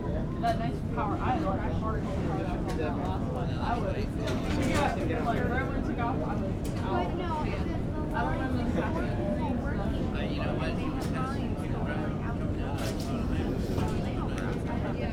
neoscenes: Sky Harbor airport baggage claim
AZ, USA